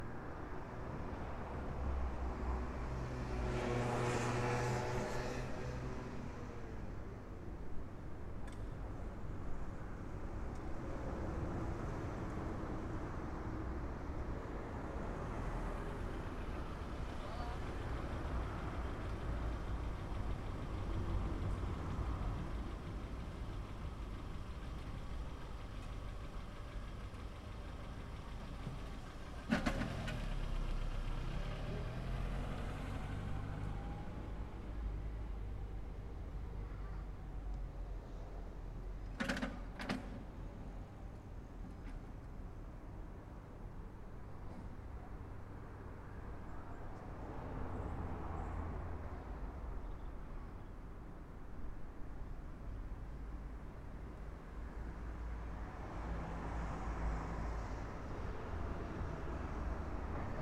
Dvorakova ulica, Maribor, Slovenia - corners for one minute
one minute for this corner - dvorakova ulica, yard